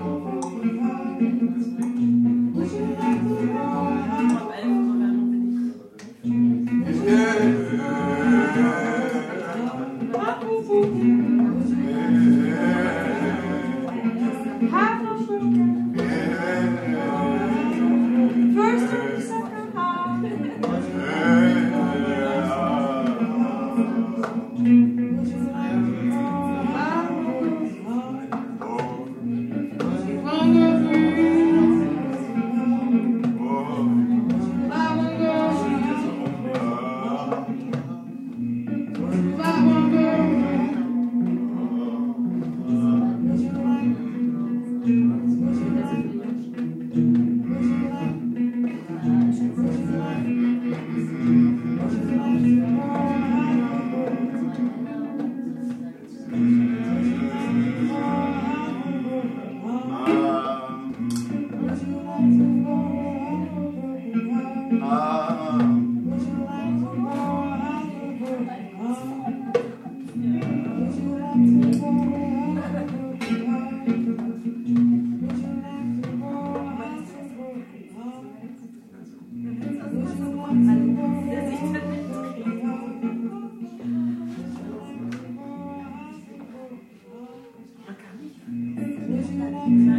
Concert at Der Kanal, Weisestr. - Der Kanal, Konzert mit Polly Tikk
Suddenly in the garage: An amplifier, microphones, a guitar, drums, a delay and also - red wine pave the way for some good vibes and a cross-continental connection. Polly Tikk visits DER KANAL for an unexpectedly beautiful jam session.